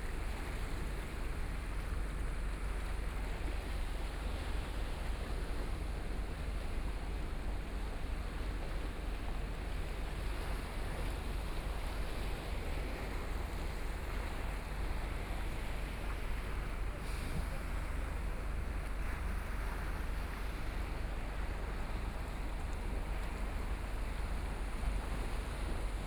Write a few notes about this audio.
Night on the coast, Traffic Sound, Sound of the waves